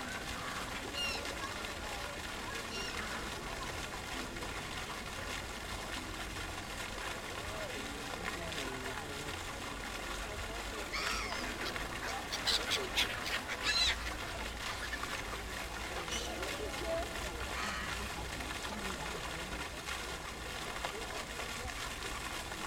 Fitzgerald's Park on a dark and grey Sunday afternoon.
Recorded onto a Zoom H5 with an Audio Technica AT2022.